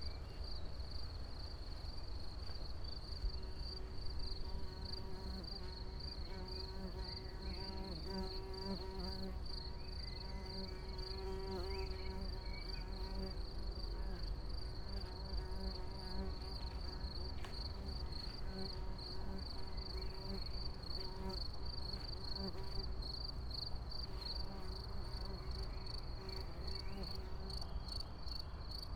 path of seasons, Piramida, Maribor - morning tuning
early solstice morning ambience with crickets, fly, distant traffic, birds, dew on high grass ...